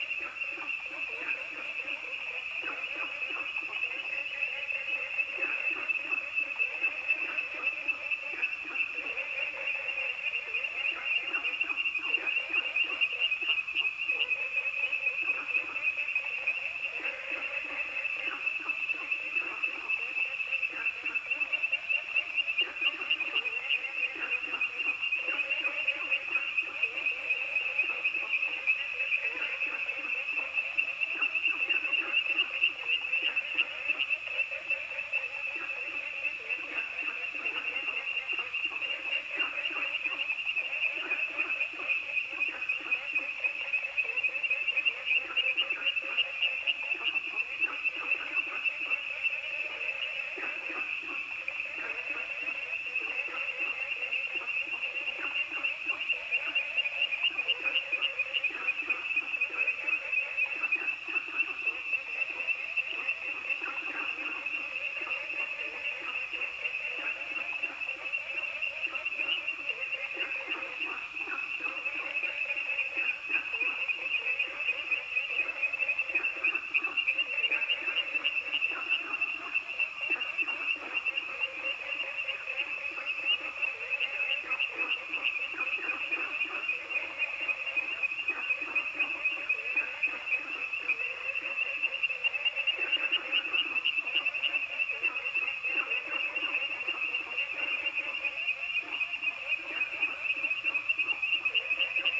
Dogs barking, Frogs chirping
Zoom H2n MS+ XY